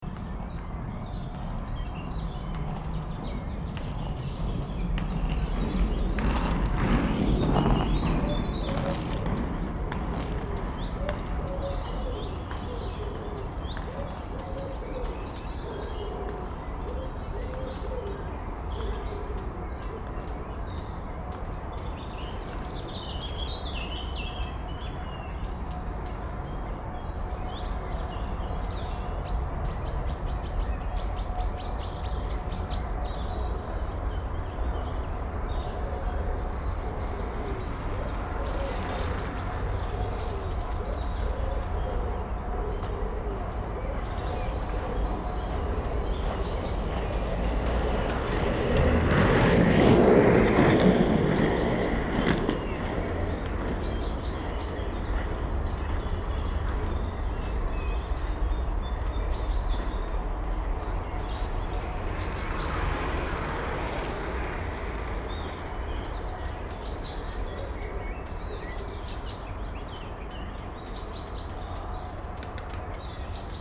Heisingen, Essen, Deutschland - essen, baldeney sea, biker and skater
Auf einem Radweg nahe des Baldeney See's. Die Klänge von Skatern und Fahrradfahrern auf betoniertem Radweg inmitten von Vogelstimmen.
On a bicycle track near to the Baldeney lake. The sounds of bikes and skaters passing by.
Projekt - Stadtklang//: Hörorte - topographic field recordings and social ambiences